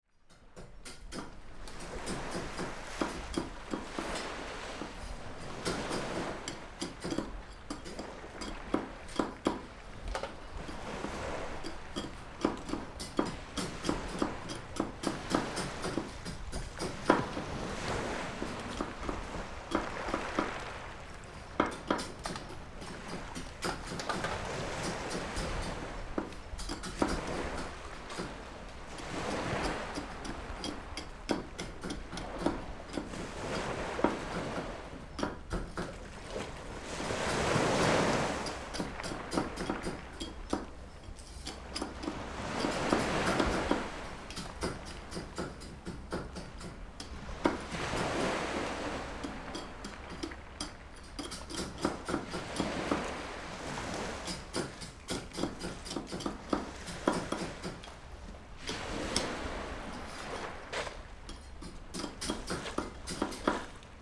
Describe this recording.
Small fishing village, Small pier, Sound of the waves, Zoom H6+ Rode NT4